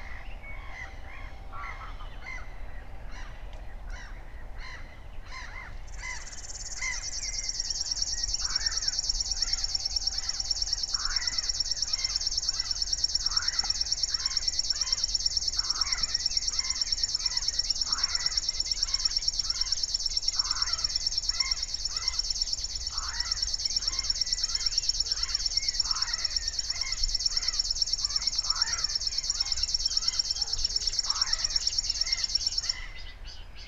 19:00 Berlin, Buch, Moorlinse - pond, wetland ambience